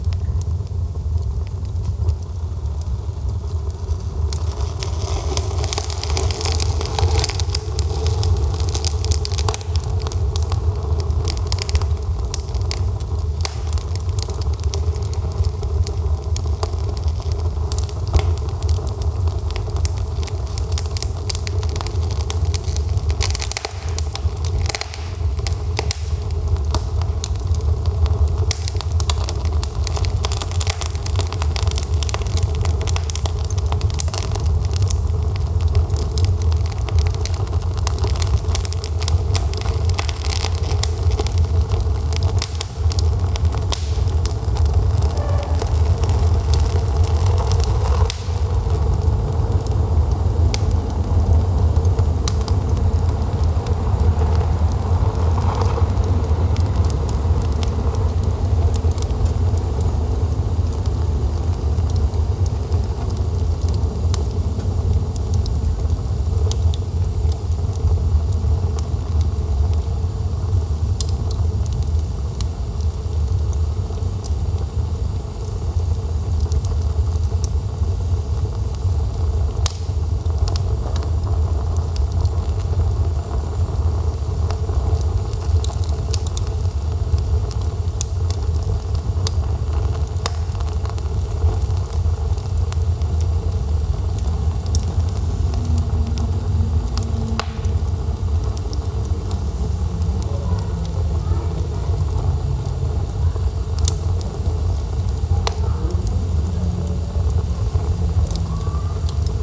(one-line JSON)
{"title": "Çıralı, Turkey - Mevlüts Stove", "date": "2018-12-19 13:30:00", "description": "Recorded with a Sound Devices MixPre-3 & a pair of DPA 4060s", "latitude": "36.41", "longitude": "30.47", "altitude": "8", "timezone": "Europe/Istanbul"}